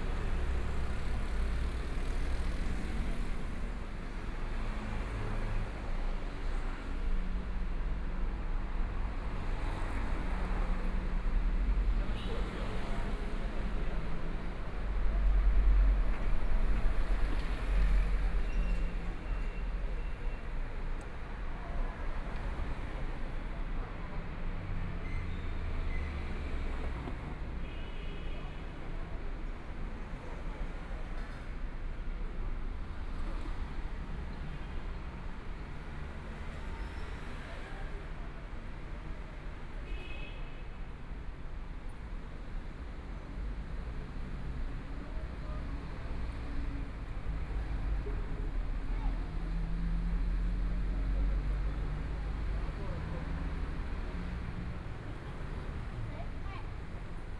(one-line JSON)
{"title": "Nong'an St., Taipei City - In the Street", "date": "2014-02-06 18:23:00", "description": "walking In the Street, Environmental sounds, Motorcycle sound, Traffic Sound, Binaural recordings, Zoom H4n+ Soundman OKM II", "latitude": "25.06", "longitude": "121.53", "timezone": "Asia/Taipei"}